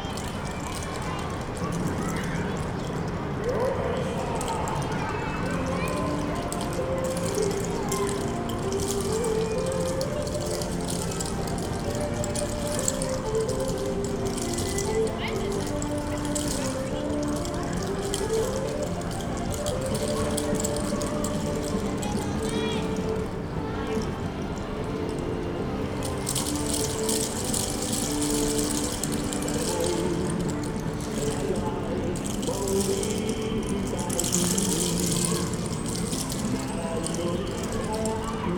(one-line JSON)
{"title": "Tokyo, Koto - children excercise", "date": "2018-09-20 13:09:00", "description": "school children exercise to music, running around the school yard with toy clappers (roland r-07)", "latitude": "35.70", "longitude": "139.82", "altitude": "1", "timezone": "Asia/Tokyo"}